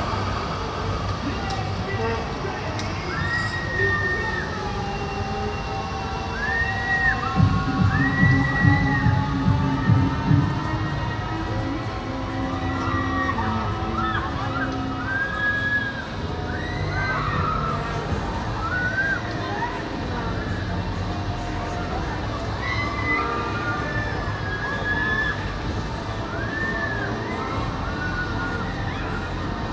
Lange Vijverberg - Koningsdag 2015 Lange Vijverberg
The annual Dutch celebration of Koningsdag (Kings day) with markets, fair and many different events. Recorded with a Zoom H2 with binaural mics.